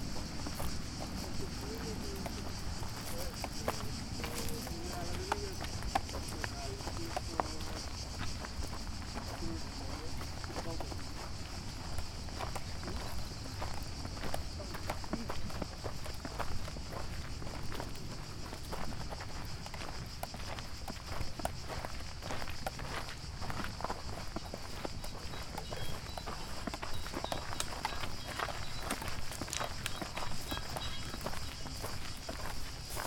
Corfu, Greece - Blind Soundwalk in Vidos Island
Record by: Alex and Eleni